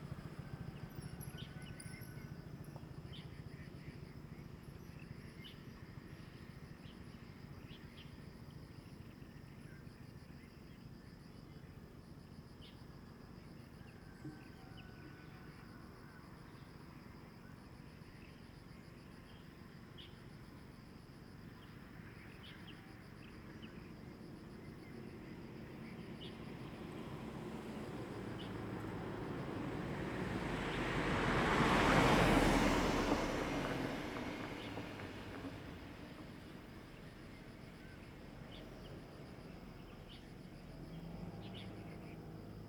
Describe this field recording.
Birds singing, Traffic Sound, Small village, In the side of the road, Zoom H2n MS+XY